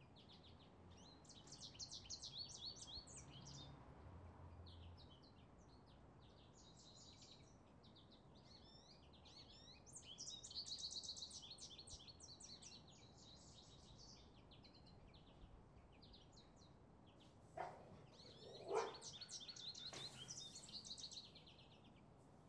Albany, Carmel ave. - barking dog and birds - Albany, Carmel ave.
my old neighbours would leave their dog to go insane inside his cage for hours, poor animal, apparently things are better now, but I left, I didn't like Albany at all.... most annoying sounds - part 3.
13 November 2010, 04:08